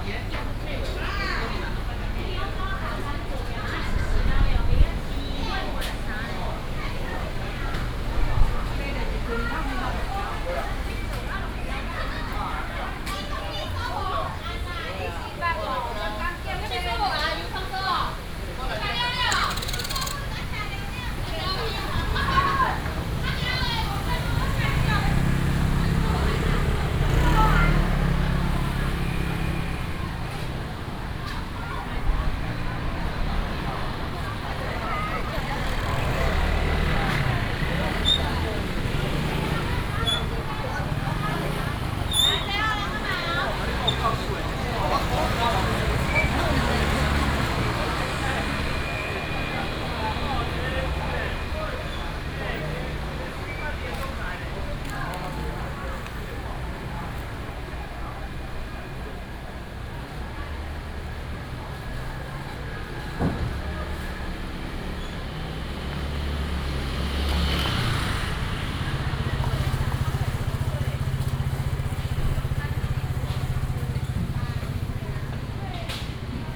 Daya Rd., Daya Dist., Taichung City - walking in the traditional market
traditional market, traffic sound, vendors peddling, Traditional market area, Binaural recordings, Sony PCM D100+ Soundman OKM II
Daya District, Taichung City, Taiwan, 19 September 2017, 12:18